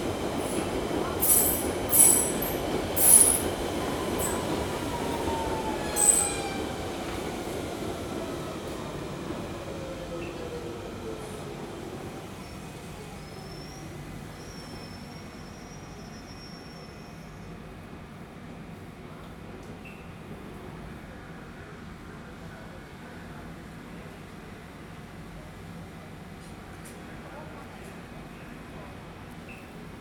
Leytonstone Underground Station, London. - Leytonstone Underground Station Platform.
Leytonstone underground station platform. Trains coming and going, station announcements, a regular bleep from the PA system and passing passengers.
London, UK